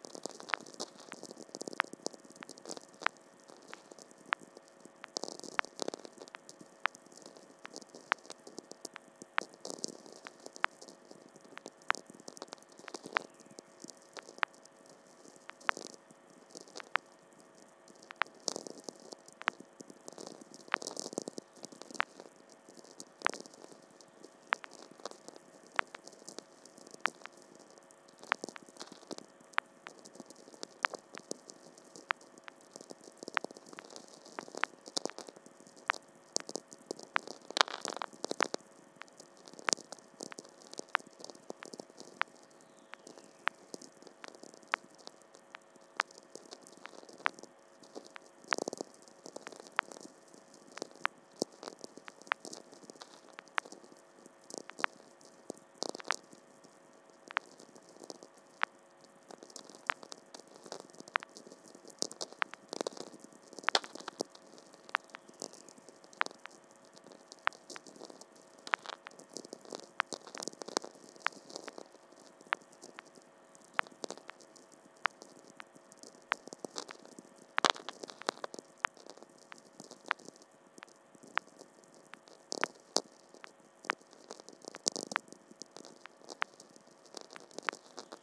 Baltakarčiai, Lithuania, VLF listening
some "whistlers" heard. and rhytmic clicks of "electric shepherd" in the meadow